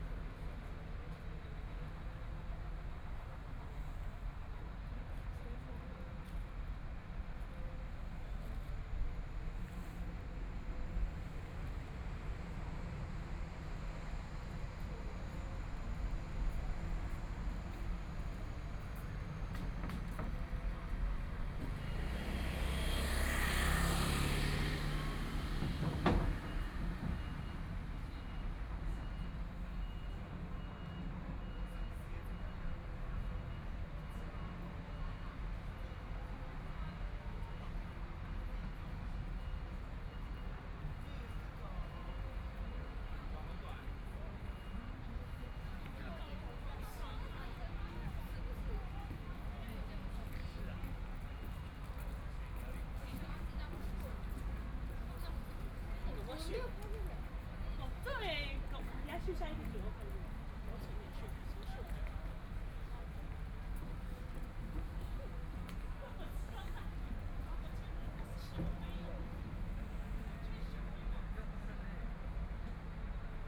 The crowd, The distance of the Buddhist Puja chanting voice, Construction noise, Binaural recordings, Zoom H4n+ Soundman OKM II
Taitung County, Taiwan